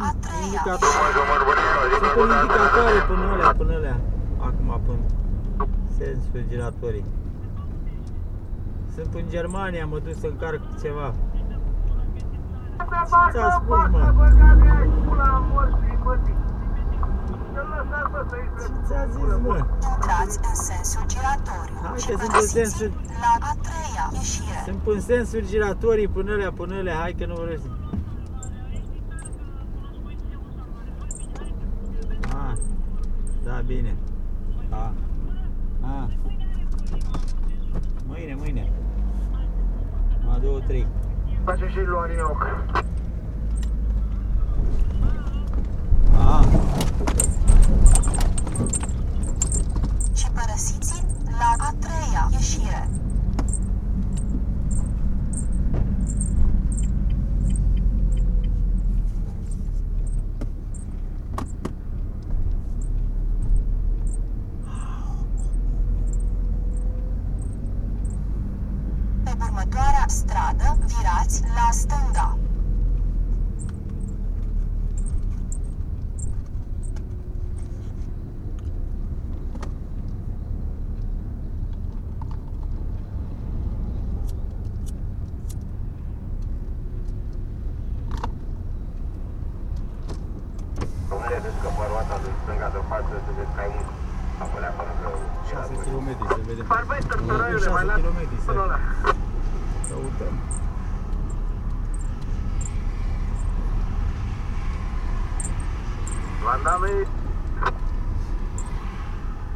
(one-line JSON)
{"title": "Chiajna, Romania - On the ring road with Ion", "date": "2016-05-19 08:30:00", "description": "Given a ride by Ion, he is navigating his van along the patchwork of Bucharest's ring road", "latitude": "44.44", "longitude": "25.97", "altitude": "95", "timezone": "Europe/Bucharest"}